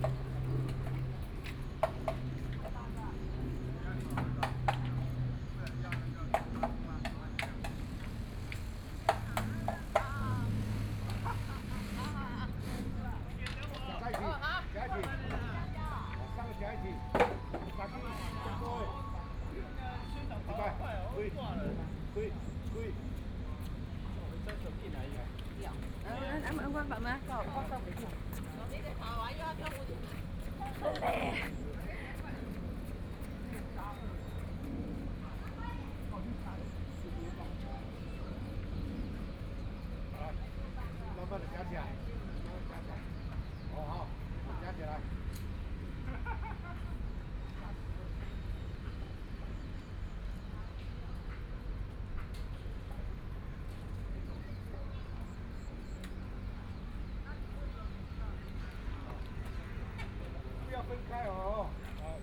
Construction works of art, Aircraft flying through, Walking to and from the sound of the crowd
Taipei City, Taiwan